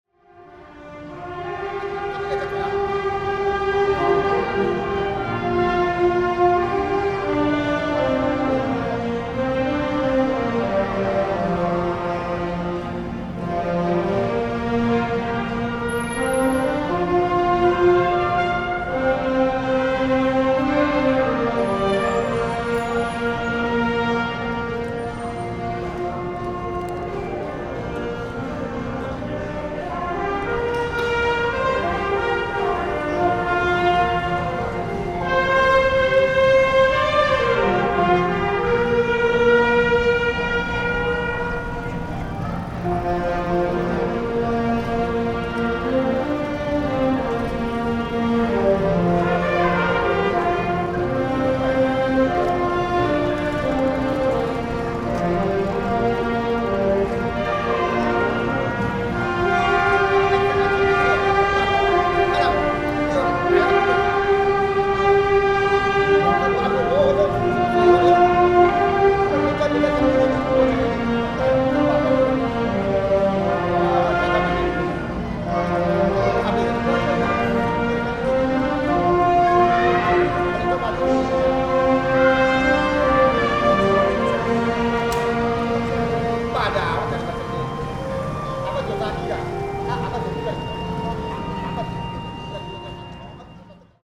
Erchong Floodway, New Taipei City - Band Practice
Band Practice, Beneath the viaduct, Rode NT4+Zoom H4n
New Taipei City, Taiwan